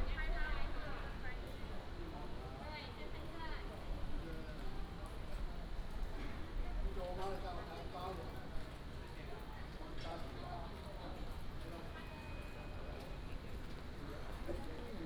Apply a vaccination, Old military community, Many elderly people line up to play the vaccination, Binaural recordings, Sony PCM D100+ Soundman OKM II
Hsinchu City, North District, 建台街29號